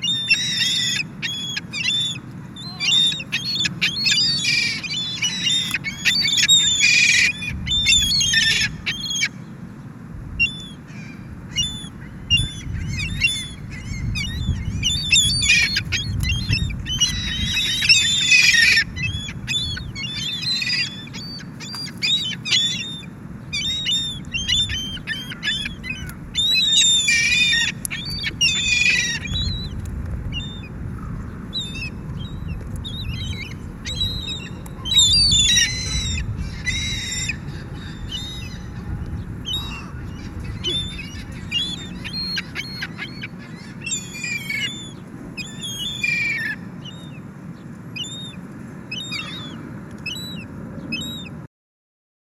Mont Royal old port, Zoom MH-6 and Nw-410 Stereo XY